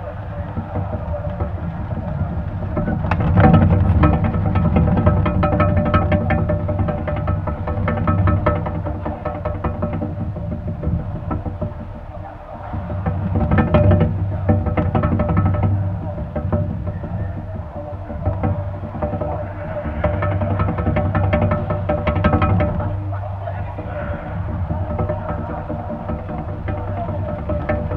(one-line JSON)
{"title": "24 West Chiltern - Brookes Banner Flapping", "date": "2019-02-11 15:15:00", "description": "Waiting for students to return from a field recording exercise and finding the natural 'flapping' frequency of an advertising banner I'm sat next to in the cafe area. Mono contact mic recording (AKG C411) with SD MixPre6.", "latitude": "51.75", "longitude": "-1.22", "altitude": "98", "timezone": "GMT+1"}